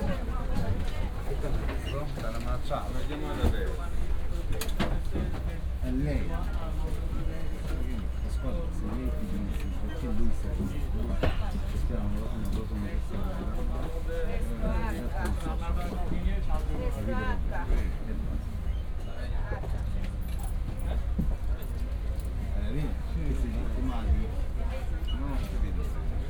Rome, in front of Stazione Termini - Termini bus depot
(binaural)
waiting for a bus H to arrive. buses nearby operating their pneumatic suspension, which made a characteristic rhythm. people of many nationalities standing on the platform. entering the crowded bus.